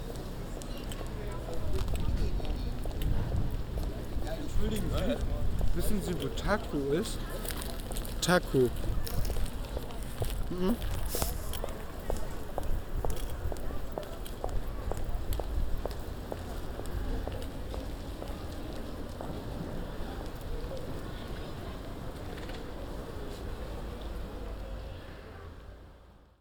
Wissen Sie wo Takko ist? Große Bergstraße. 31.10.2009 - Große Bergstraße/Möbelhaus Moorfleet
Große Bergstraße 4